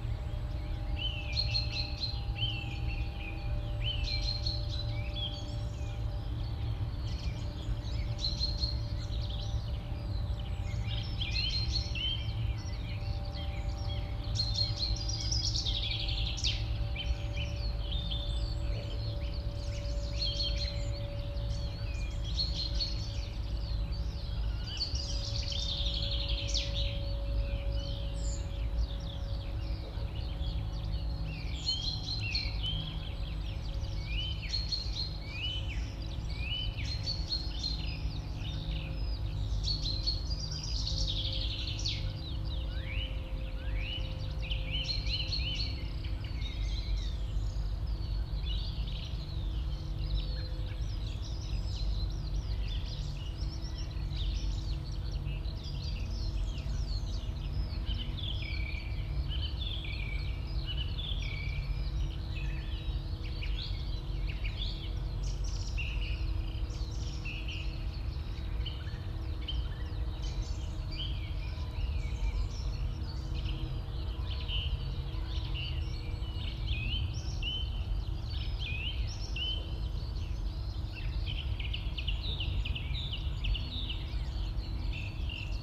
Birds singing include song thrush, chaffinch, skylark, ravens, crows.
Around 4min the generator speeds up and its hum rises in pitch, but the wind is very light and drops again. Very distant cranes can be heard towards the end.
Brandenburg, Deutschland, 24 March 2021, ~06:00